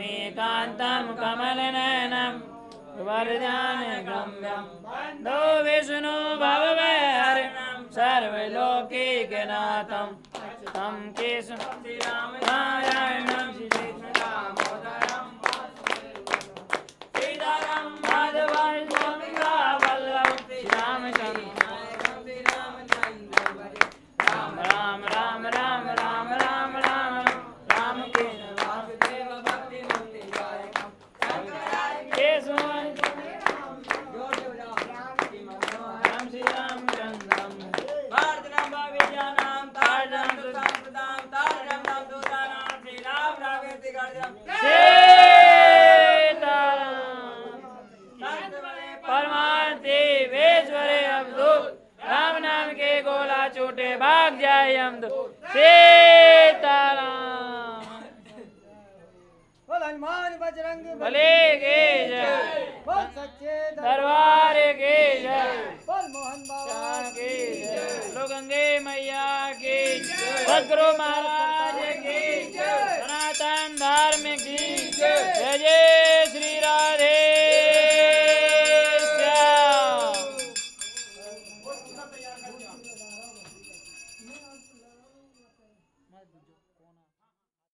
Swarg Ashram, Rishikesh, Uttarakhand, Inde - Rishikesh - cérémonie
Rishikesh - cérémonie de la fin d'après-midi
Pauri Garhwal, Uttarakhand, India, 14 June 2008